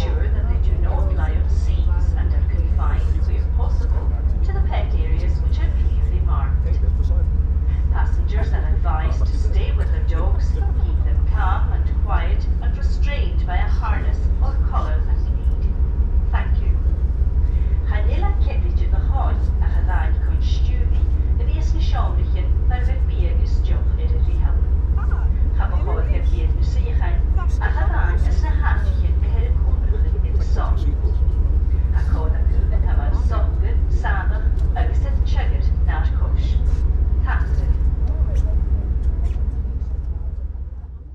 Oban, UK - Ferry from Oban to Craignure
Safety announcements in English and Gaelic on the ferry from Oban to Craignure (Mull), with some background chatter. Recorded on a Sony PCM-M10.
19 September